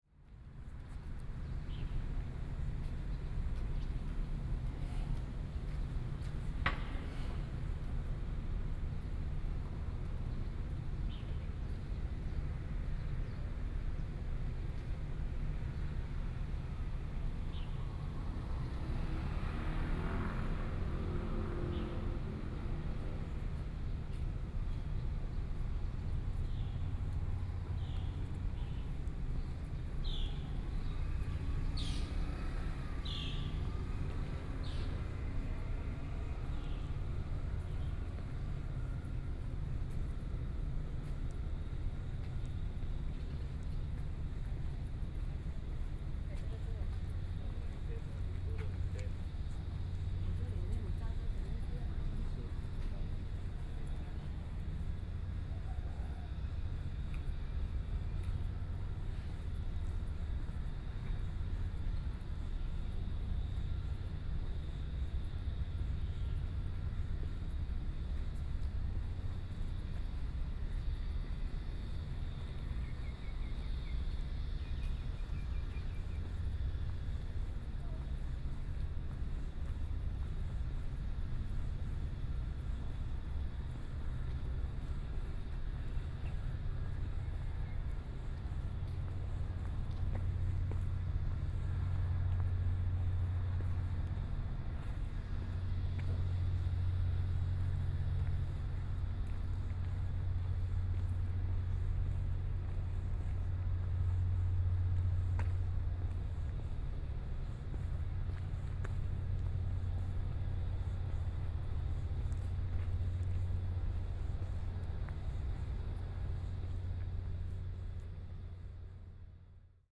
鹽埕區新化里, Kaoshiung City - Morning pier
Birds singing, Morning pier, Sound distant fishing, People walking in the morning
14 May, 06:24